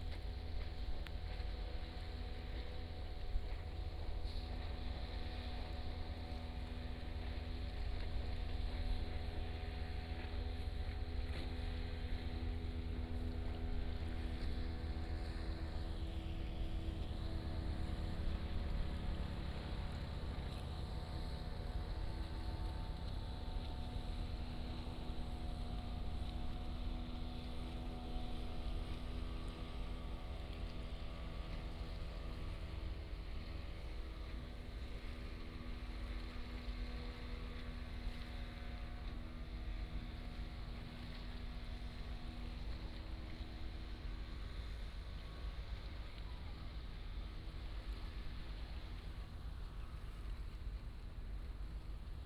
On the coast, Fishing boat on the sea, Birds singing